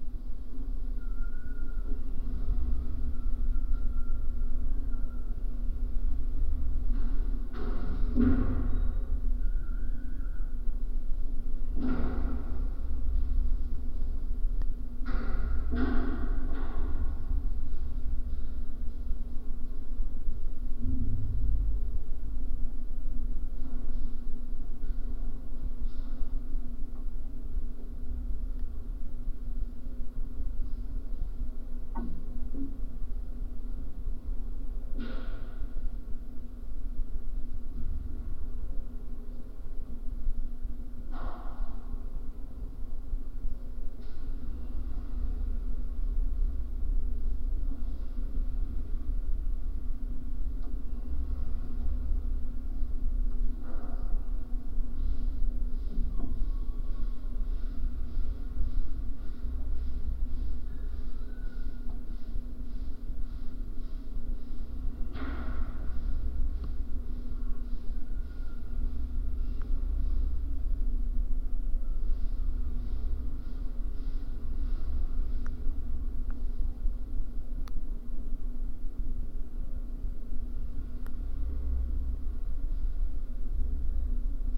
contact microphones on the iron wires supporting the construction of concert hall. low frequencies!